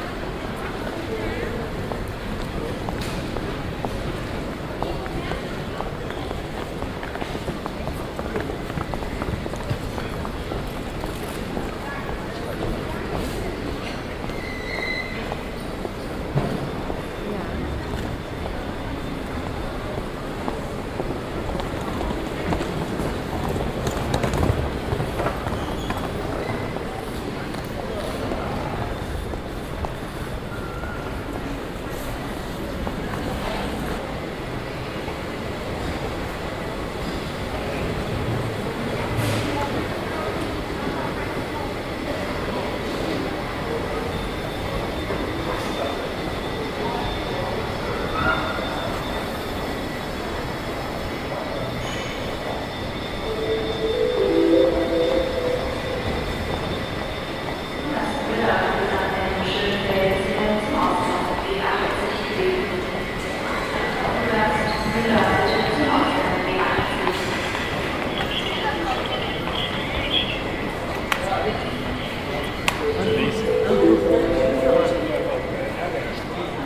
cologne/bonn airport - hall d - departure area
soundmap nrw: social ambiences/ listen to the people - in & outdoor nearfield recordings
14 June